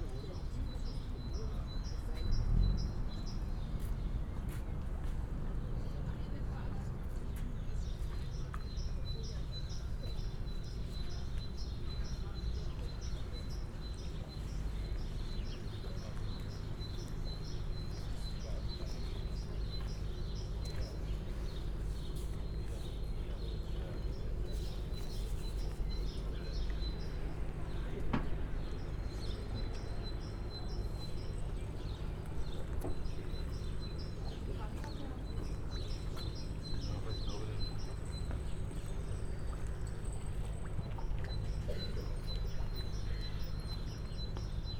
Grünau, Berlin, Deutschland - BVG ferry station
Grünau, pier of a Berlin public transport ferry station, boat arrives, people with bikes entering, pier ambience
(SD702, DPA4060)